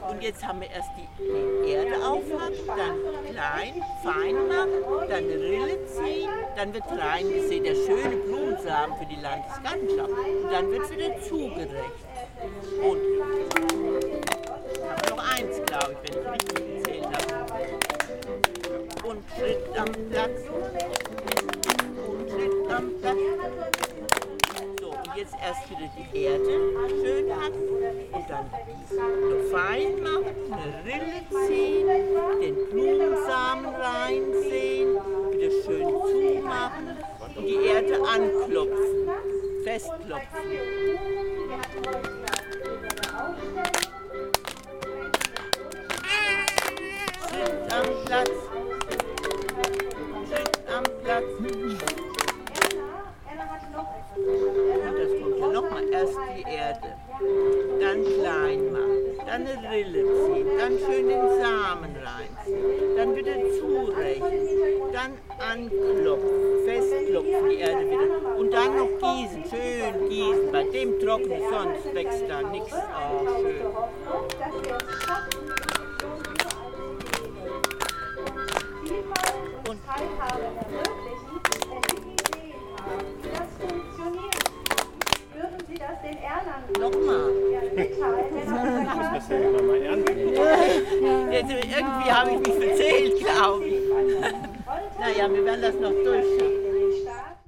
Gießen, Deutschland - Dorfgemeinde Singspiel
Childen and adults sitting on chairs in a circle, on a table a tape recorder with playback music, a lady conducting a singing game about gardening. The whole seemed to be part of an initiative called "Unser Dorf soll wachsen und schöner werden"(our village shall grow and become more beautiful). Recorded with a ZoomH4N
Giessen, Germany